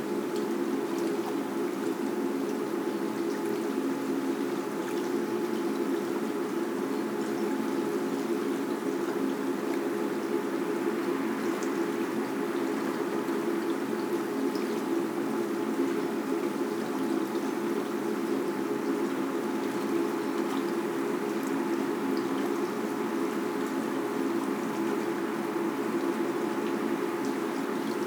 Schon oft hier gestanden, am Ende des Wegs, denn die eigentliche Weiterführung ist einfach nicht mehr existent. Dafür wird man hier immer mit einem leichten leisen Brummen belohnt, welches der Teil der Rohrach hervorruft, welcher sich unter der Mühle hindurch schlängelt.
Ein kleiner Beitrag zum World Listening Day 2014 #WLD2014 #heima®t
heima®t - eine klangreise durch das stauferland, helfensteiner land und die region alb-donau